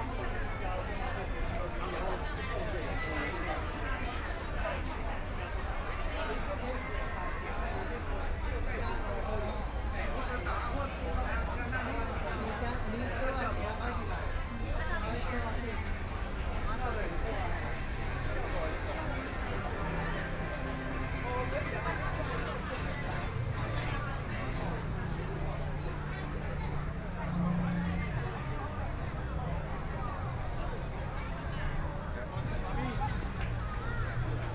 Yimin Festivtal, Fair, Binaural recordings, Sony PCM D50 + Soundman OKM II
Zhongzheng District, Taipei City, Taiwan